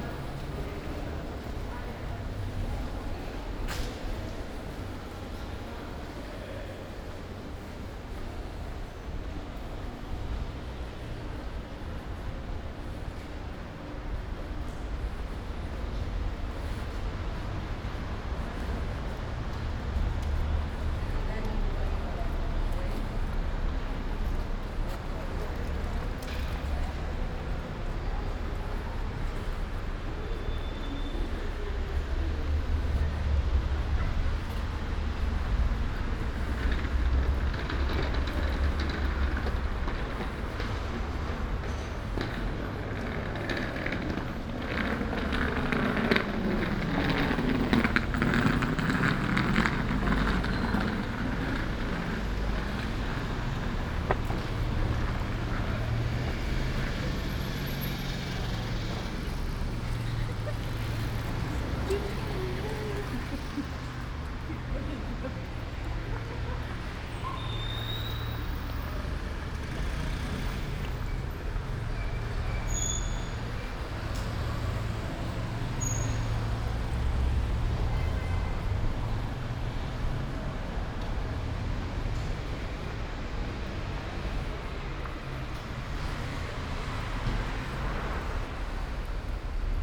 Ascolto il tuo cuore, città, I listen to your heart, city, Chapter CXXXII - Far soundwalk and soundtraintrip with break
"Far soundwalk and soundtraintrip with break in the time of COVID19" Soundwalk
Chapter CXXII of Ascolto il tuo cuore, città. I listen to your heart, city
Thursday, September 24th, 2020. Walk + traintrip to a far destination; five months and thiteen days after the first soundwalk (March 10th) during the night of closure by the law of all the public places due to the epidemic of COVID19.
This path is part of a train round trip to Cuneo: I have recorded only the walk from my home to Porta Nuova rail station and the train line to Lingotto Station. This on both outward and return
Round trip where the two audio files are joined in a single file separated by a silence of 7 seconds.
first path: beginning at 7:00 a.m. end at 7:31 a.m., duration 30’53”
second path: beginning at 4:25 p.m. end al 5:02 p.m., duration 26’37”
Total duration of recording 00:56:37
As binaural recording is suggested headphones listening.